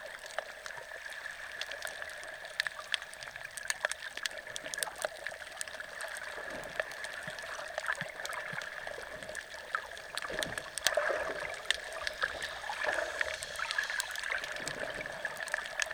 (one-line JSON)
{"title": "Bundeena, NSW, Australia - (Spring) Inside Bundeena Bay At Midday", "date": "2014-09-24 13:00:00", "description": "I'm not sure what all the sound sources are. There were lots of little fish around the microphone so I'm assuming they were one of the vocalists.\nTwo JrF hydrophones (d-series) into a Tascam DR-680.", "latitude": "-34.08", "longitude": "151.15", "timezone": "Australia/Sydney"}